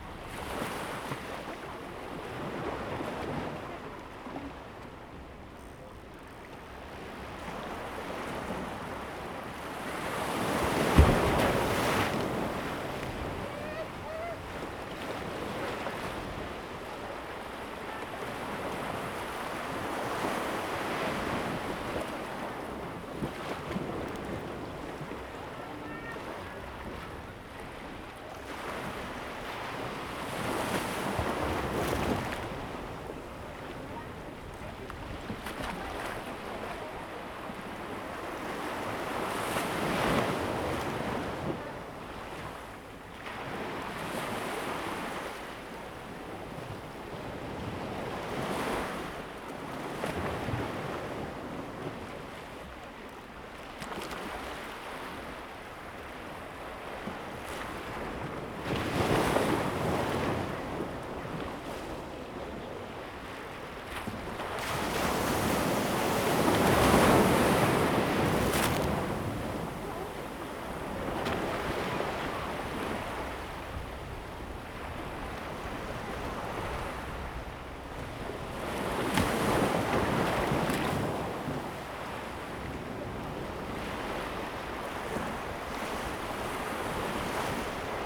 磯崎村, Fengbin Township - Small pier
Small pier, Waves, Birdsong sound, Tourists, Very hot weather
Zoom H2n MS+XY
Fengbin Township, 花東海岸公路, August 2014